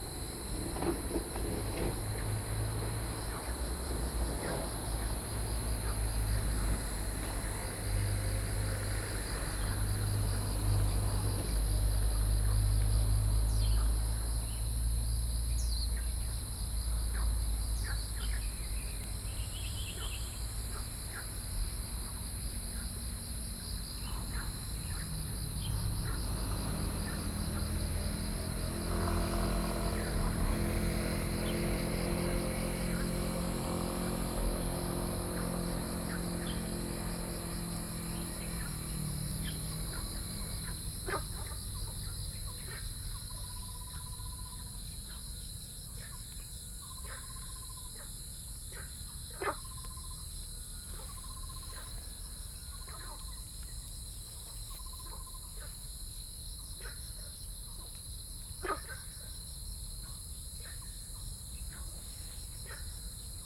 Xiaopingding, Tamsui Dist., New Taipei City - Frog and Birds
Next to the pool, Frog calls, Insect sounds, Birds singing, Binaural recordings, Sony PCM D50 + Soundman OKM II